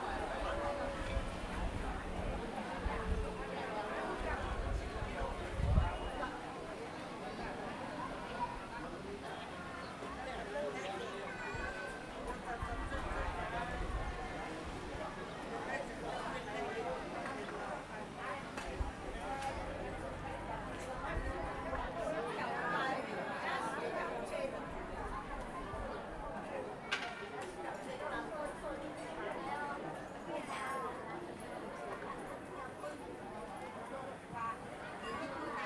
香港西貢萬宜灣村遊樂場 - 老人家打牌
正午，大晴天。
老人家聚在大涼亭下打牌作樂，好不熱鬧！